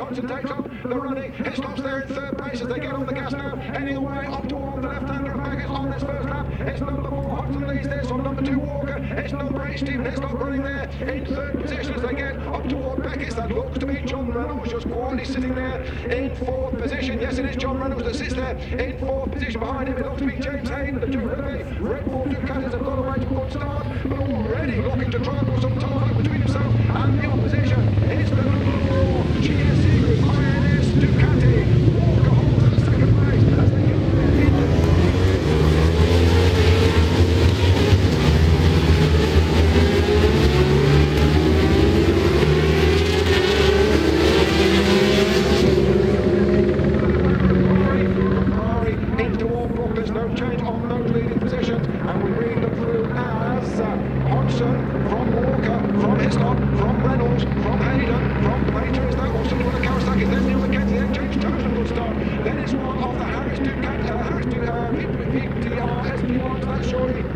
British Superbikes 2000 ... race one ... one point stereo mic to minidisk ...
Towcester, United Kingdom, 2 July 2000, 12:00